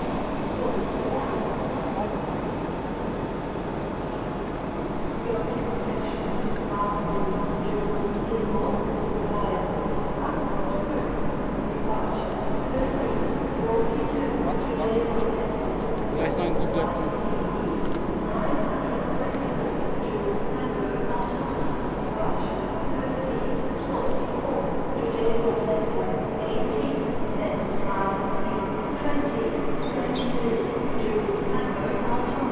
Am Hauptbahnhof Ebene A // gegenüber Gleis, Frankfurt am Main, Deutschland - 24. April 2020 Gleiszugang
Starts with the escalator, but the one that leads directly into the platforms. Shortly after arriving there someone asks for money. This is one of the big differences to the time before Corona: the beggars are more bluntly asking for money. They were there before, but since there are less people and people are giving less money (like me), they have to ask more. In a recording I did.a little bit later at the trainstation of the airport a man complains that the situation has become more difficult...
There is an anouncement with a sound I never heard before, the voice asking people not to stay on the platform (as far as I understand).
Hessen, Deutschland, 24 April 2020